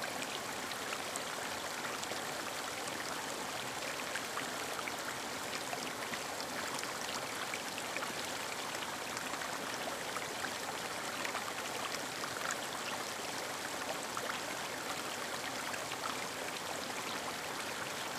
Redwood park creek, Oakland Hills